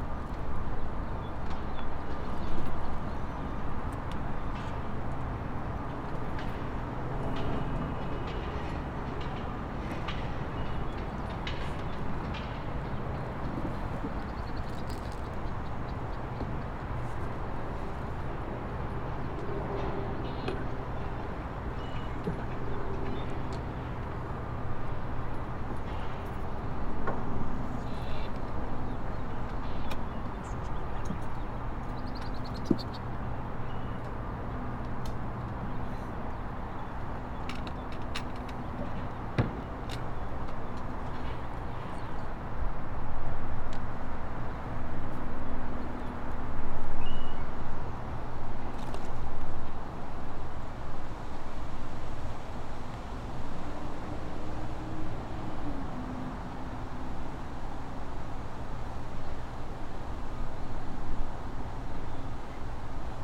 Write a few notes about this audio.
Recorded above water on Tender 1 with SAIC Eco Design Chicago River Works class taught by Linda Keane and Eric Leonardson